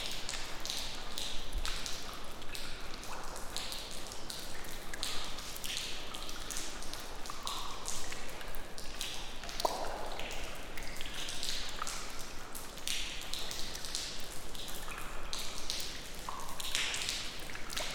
Baggböle kraftverk, Umeå. Raindrops from leaking roof#1
Baggböle kraftverk
Recorded inside the abandoned turbine sump whilst raining outside. Drips from leaking roof.
6 May 2011, 15:50